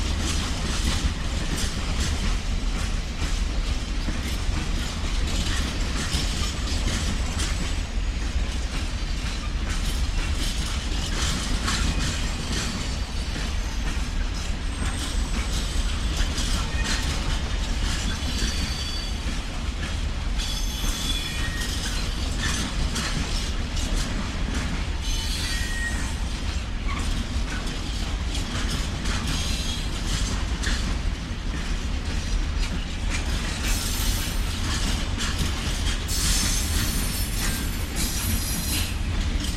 freight train, Montzen
Montzen goods station, freight train with 2 Belgian class 55 GM diesels, revving engines and then driving off. Zoom H2.
7 October